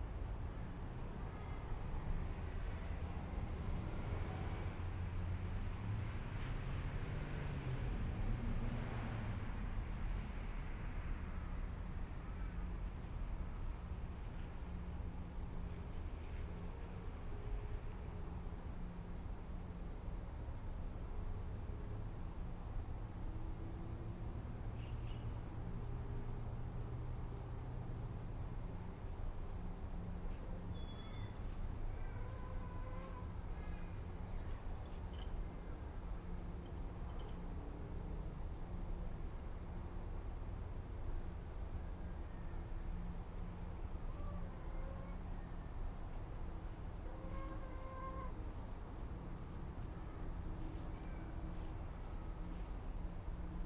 World Listening Day. There is a steady background noise of distant traffic and then you can hear ravens, a magpie, a blue wren, a magpie lark, crested pigeons flying - and one of my chickens.
Barton ACT, Australia, 18 July 2010